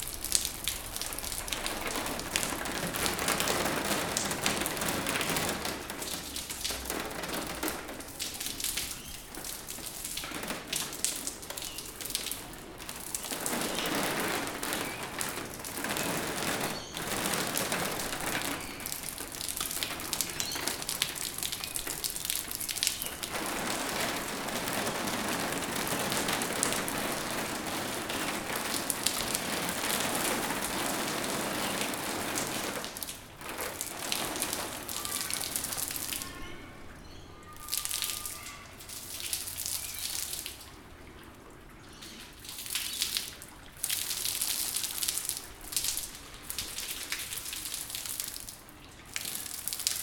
Water falling from the building onto strictly one car. Recorded with zoom pro mic
New South Wales, Australia